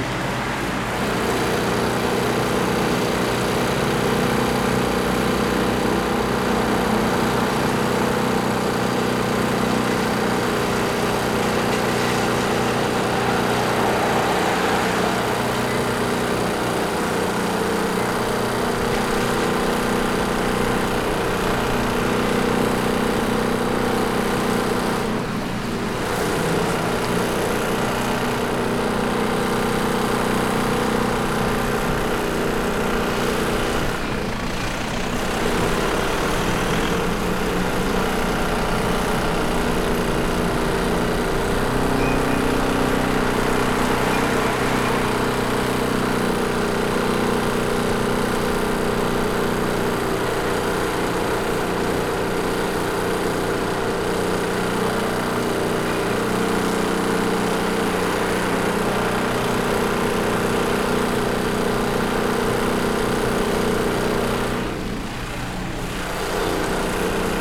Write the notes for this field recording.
A loud portable electric generator in front of a "Maxima" store + traffic sounds. Recorded with ZOOM H5.